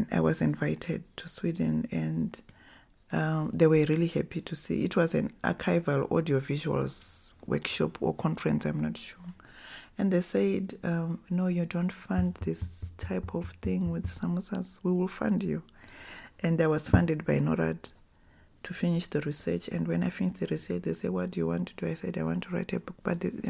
Joyce Makwenda's Office, Sentosa, Harare, Zimbabwe - Joyce Makwenda’s passion for music, research, collecting…
We are in Joyce Makwenda’s office which houses two rooms with her collection gathered in a life of creative production and research. She tells us how her passion for the arts, for listening to stories and for collecting initiates her into yet unknown activities like writing and filmmaking. Towards the end of the interview, she poignantly says, “it’s good we are part of a global culture and what not; but what do we bring to that global village…?”
Find the complete recording with Joyce Makwenda here:
Joyce Jenje Makwenda is a writer, filmmaker, researcher, lecturer and women’s rights activist; known for her book, film and TV series “Zimbabwe Township Music”.